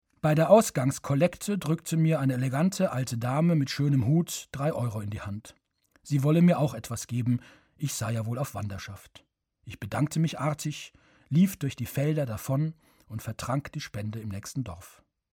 mariental-dorf - in der klosterkirche
Produktion: Deutschlandradio Kultur/Norddeutscher Rundfunk 2009
Mariental, Germany, 8 August